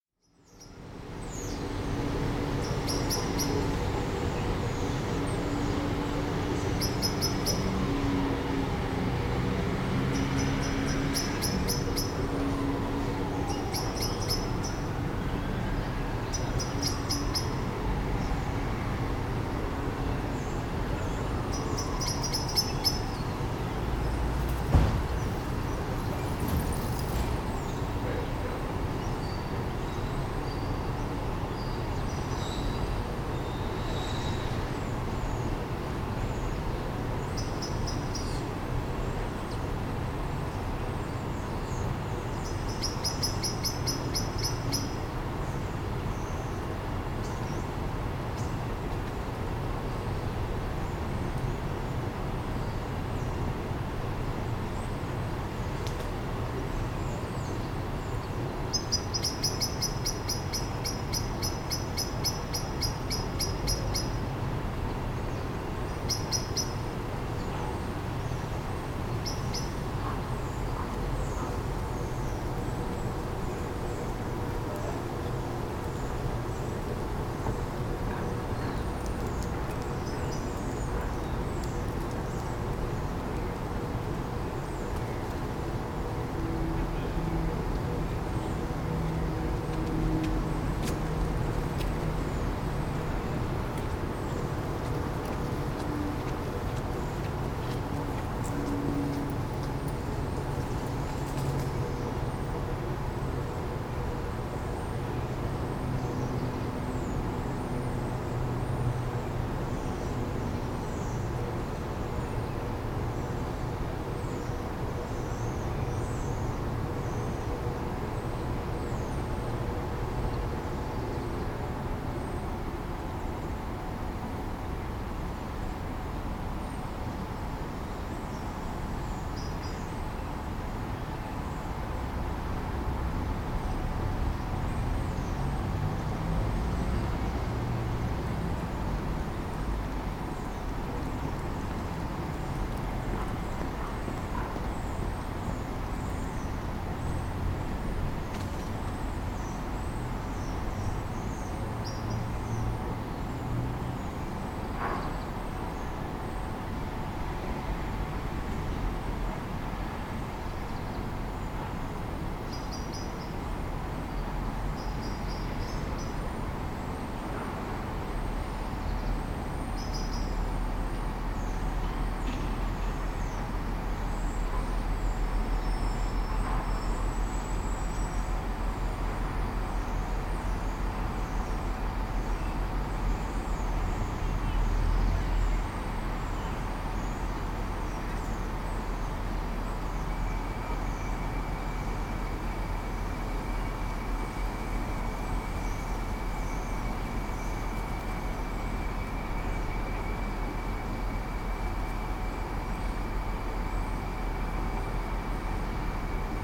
St Mary's Butts, Reading, UK - Blackbird alarm calls
Passing Bill's restaurant, I noticed the distinctive sounds of a Blackbird. I remembered that Phil Harding had mentioned the sound of this species is a bit like scissors and decided to record the sound so I could later compare it to the snip snip snip of my amazing pair of Ernest & Wright scissors. You can hear the rumble of Reading all around - truly there is nowhere in my town to get away from the bass of traffic - but you can also hear this bird, who has made its home in the trees near a restaurant and the church yard of St. Mary's Butts. The singing tones of the bus brakes, the snip snip snip of the Blackbird; these are all sounds of winter evenings in Reading. I really enjoyed standing in the cold blue light and listening to my town and this Blackbird making his evening calls within it. Recorded with EDIROL R09.